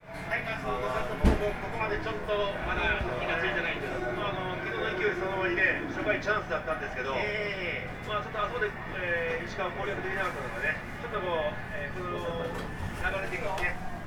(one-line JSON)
{"title": "Osaka, Kitahamahigashi district, lunch bar - basaball game on tv", "date": "2013-03-30 17:00:00", "latitude": "34.69", "longitude": "135.51", "altitude": "19", "timezone": "Asia/Tokyo"}